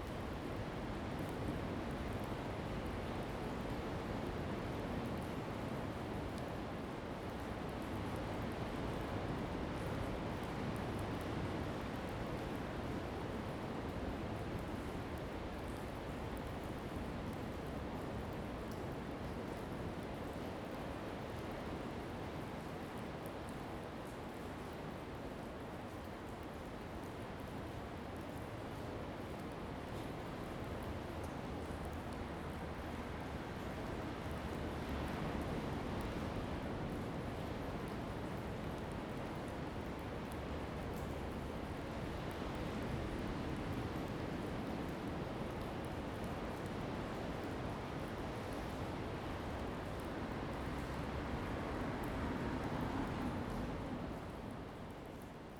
inside the giant cave, sound of the waves
Zoom H2n MS +XY
29 October, ~10:00